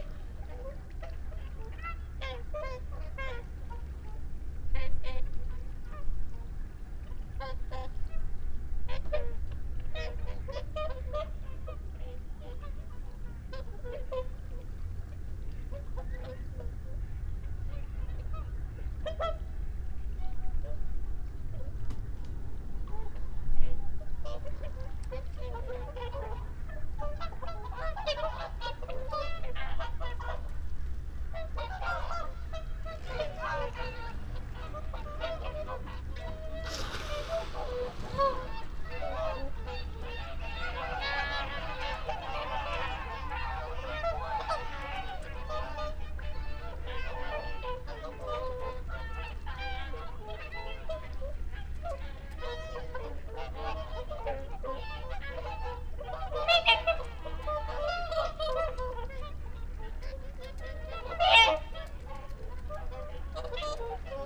Dumfries, UK - whooper swan call soundscape ...
whooper swan call soundscape ... xlr sass to Zoom h5 ... bird calls from ... curlew ... shoveler ... wigeon ... barnacle geese ... mallard ... lapwing ... unattended time edited extended recording ...